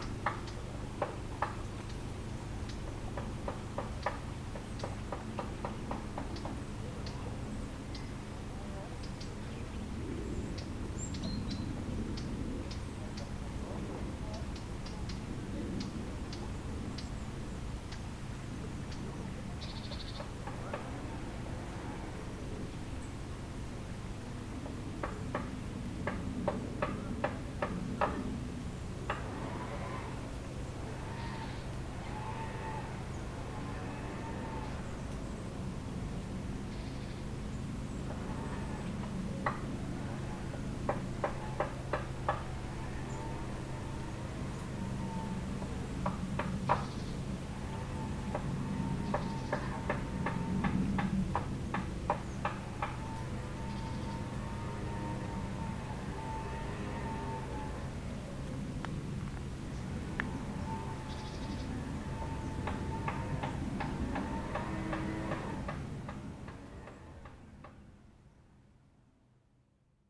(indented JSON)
{
  "title": "Promenade au fil de l'eau, Chem. des Confins, Aix-les-Bains, France - Ambiance du sentier",
  "date": "2022-09-06 12:00:00",
  "description": "Au bord du sentier de la promenade au fil de l'eau. le murmure des feuillages, les bruissements des roseaux agités par la brise, quelques mésanges et merles, les bruits d'un chantier voisin, bateau sur le lac.....",
  "latitude": "45.71",
  "longitude": "5.89",
  "altitude": "242",
  "timezone": "Europe/Paris"
}